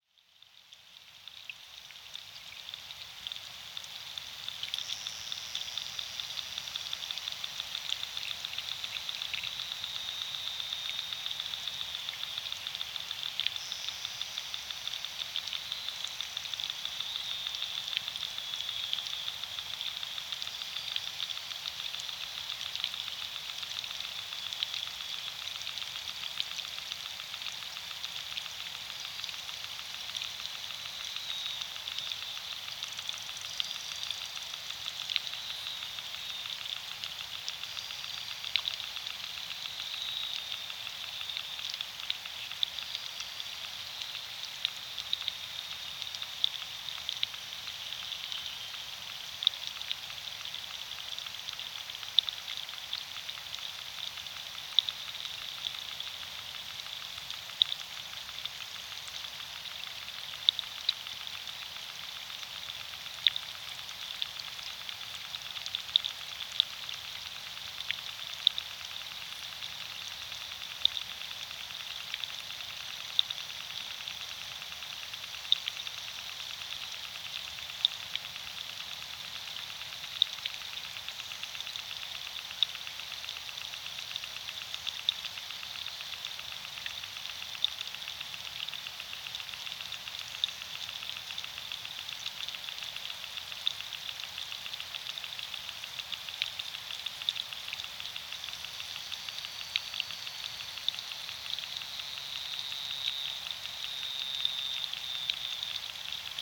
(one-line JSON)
{"title": "Lithuania, river Sventoji hydrophone", "date": "2021-09-11 13:10:00", "description": "Underwater sounds of river Sventoji. Stereo piezo hydrophone.", "latitude": "55.62", "longitude": "25.55", "altitude": "87", "timezone": "Europe/Vilnius"}